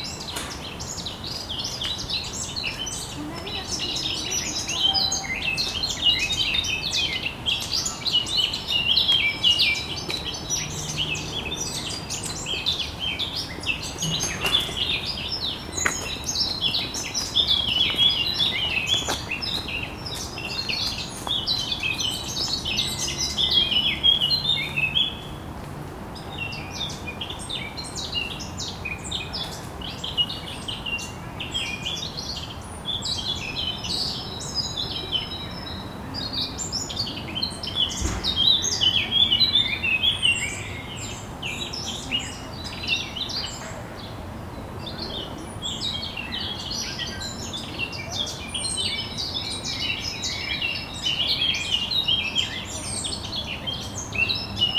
{
  "title": "Rue des Amidonniers, Toulouse, France - Amidonniers Birds",
  "date": "2022-05-07 15:03:00",
  "description": "birds, bicycle, walker\nin the background the sound of the river, road and city\nCaptation : ZOOMH4n",
  "latitude": "43.61",
  "longitude": "1.42",
  "altitude": "136",
  "timezone": "Europe/Paris"
}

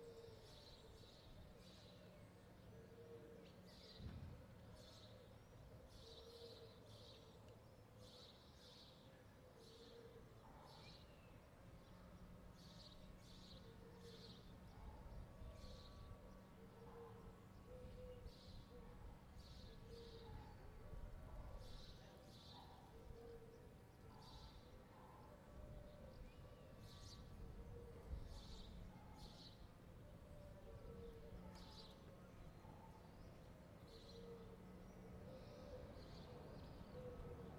{
  "title": "Αντίκα, Ξάνθη, Ελλάδα - Metropolitan Square/ Πλατεία Μητρόπολης- 10:45",
  "date": "2020-05-12 10:45:00",
  "description": "Quiet ambience, birds singing, car passing by.",
  "latitude": "41.14",
  "longitude": "24.89",
  "altitude": "95",
  "timezone": "Europe/Athens"
}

